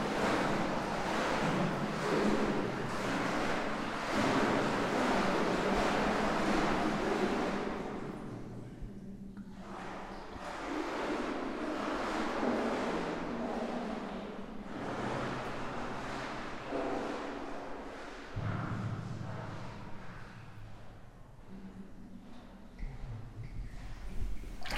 Esch-sur-Alzette, Luxembourg - Deep mine
Exploring a very deep tunnel in the Ellergrund mine. We are in the called green ore layer. It's the deeper layer of the mine, which counts 8 levels : the green, the black, the brown, the grey, the red, the wild red, the yellow and the wild yellow. Unfortunately for us, as it's very deep, there's a lot of water. We are trying to cross a flooded district.
15 April 2017, 13:30